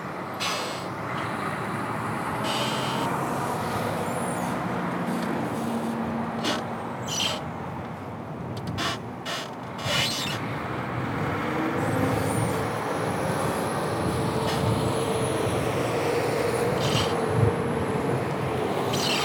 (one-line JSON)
{"title": "Binckhorst, L' Aia, Paesi Bassi - Crackling flag", "date": "2013-03-14 00:55:00", "description": "A flag crackling and traffic. Recorded with Zoom H2n in mid/side mode.", "latitude": "52.06", "longitude": "4.34", "altitude": "2", "timezone": "Europe/Amsterdam"}